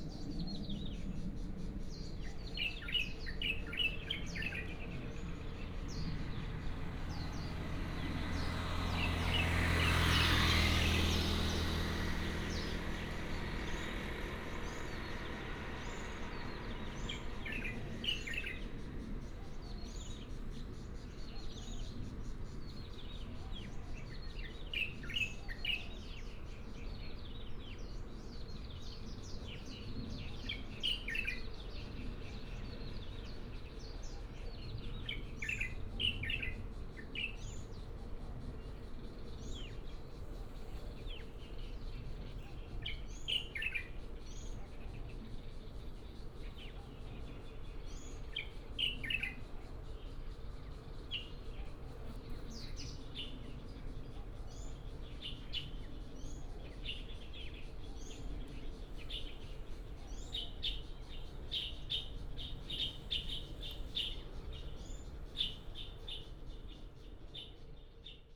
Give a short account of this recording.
Beside the woods, Wind Turbines, traffic Sound, Bird sound, Various bird tweets